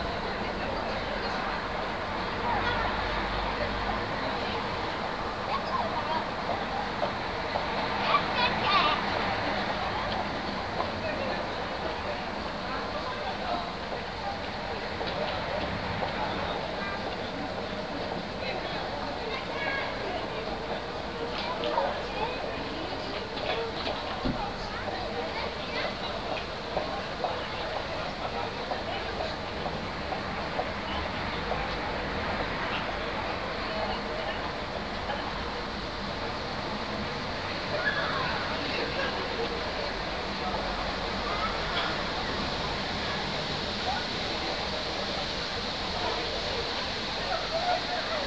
Zolnierska, Szczecin, Poland
Students at entrance to school.
2 October